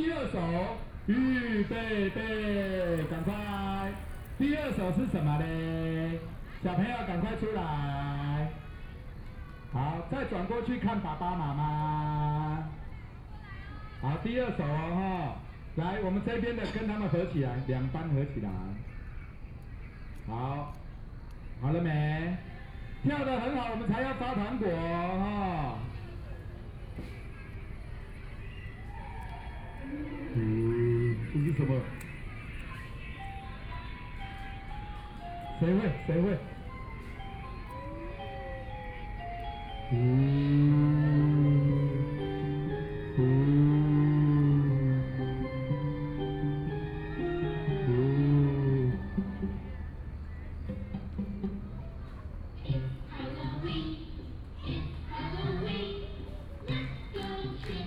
Tamsui District, New Taipei City - party
Riverside Park at night, In the woods next to the restaurant, Just some of the kids games and activities, Binaural recordings, Sony PCM D50 + Soundman OKM II
26 October, 20:14